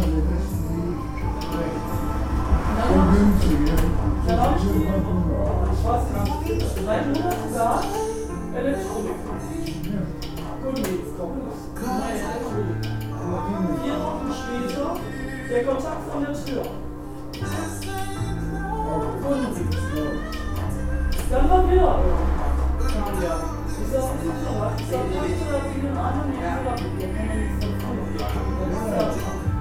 Altenessen - Süd, Essen, Deutschland - marktklause
marktklause, vogelheimer str. 11, 45326 essen
31 August 2015, 23:00